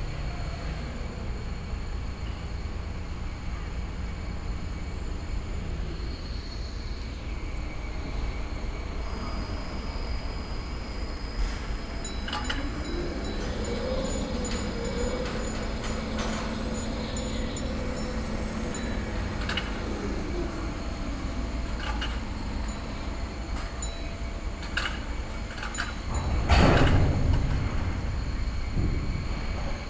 Niehler Hafen, Köln - container terminal ambience
evening hours at the container terminal Köln Niehl harbour, Westkai, container crane at work, loading and unloading of trucks
(Sony PCM D50, DPA4060)